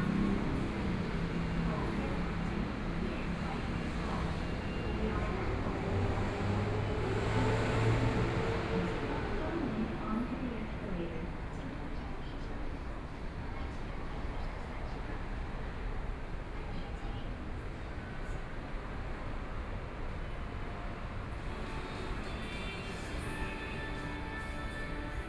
Gangqian Station, Taipei - MRT Station
in the Mrt Station, Traffic Sound
Binaural recordings